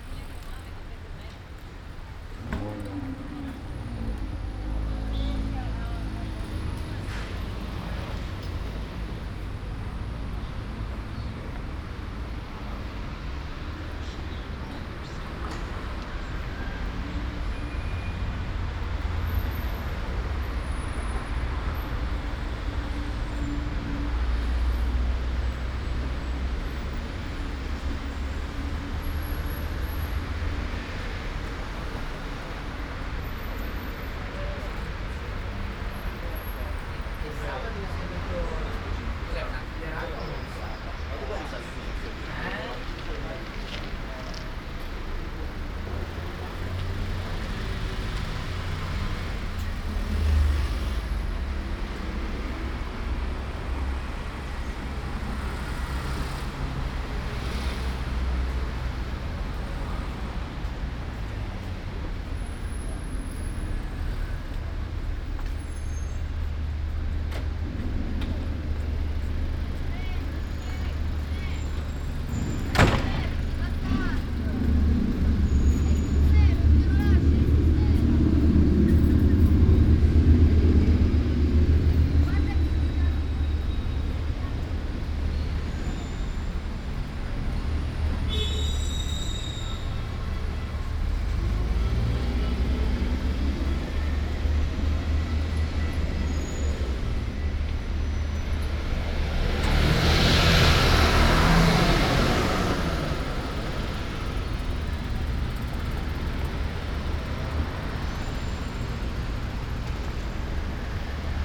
Ascolto il tuo cuore, città. I listen to your heart, city. Several chapters **SCROLL DOWN FOR ALL RECORDINGS** - It’s seven o’clock with bells on Tuesday in the time of COVID19 Soundwalk
"It’s seven o’clock with bells on Tuesday in the time of COVID19" Soundwalk
Chapter LXXXVII of Ascolto il tuo cuore, città. I listen to your heart, city
Tuesday, May 26th 2020. San Salvario district Turin, walking to Corso Vittorio Emanuele II and back, seventy-seven days after (but day twenty-three of Phase II and day ten of Phase IIB and day four of Phase IIC) of emergency disposition due to the epidemic of COVID19.
Start at 6:51 p.m. end at 7:17 p.m. duration of recording 26’09”
The entire path is associated with a synchronized GPS track recorded in the (kmz, kml, gpx) files downloadable here: